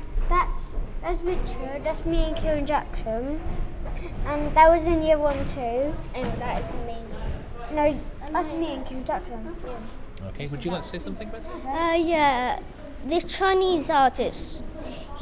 Main hall Chinese art display 3/4R
UK, 21 March, ~15:00